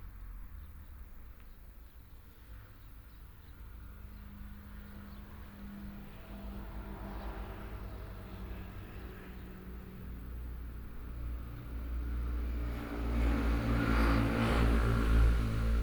Next to the temple, Birdsong sound, Small village, Traffic Sound
Sony PCM D50+ Soundman OKM II